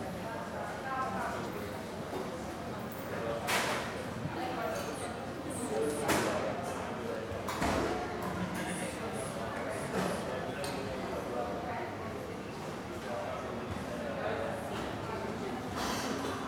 Porto, at Costa Coffee - busy afternoon at a cafe
coffee house bustling with customers.